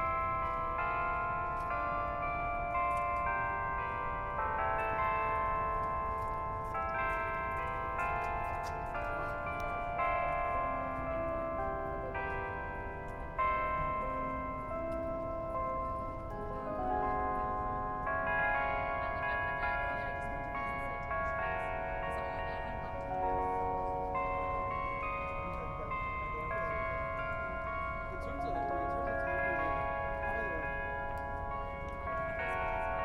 Muhlenberg College, West Chew Street, Allentown, PA, USA - Haas Bell Tower from Parents' Plaza
The sound of the Muhlenberg College Haas Bell Tower outside the student union building. Students can be heard moving between classes in the rain.
3 December 2014, ~12:00